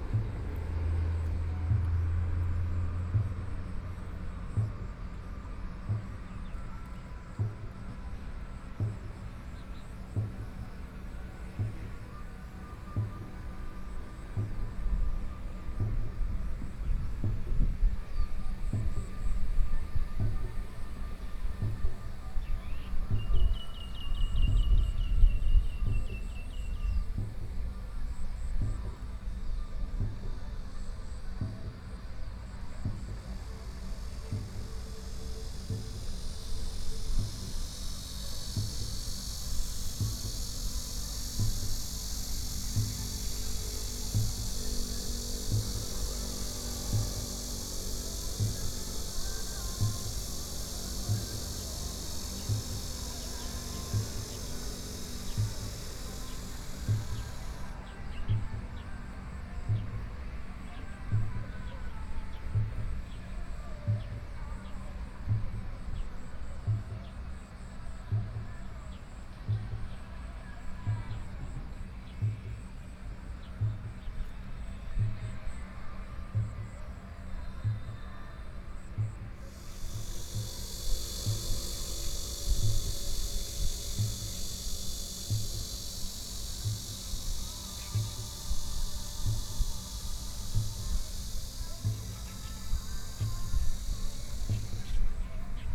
冬山河, 五結鄉協和村 - In the river bank
In the river bank, Hot weather, Traffic Sound, Birdsong
Yilan County, Taiwan, 29 July 2014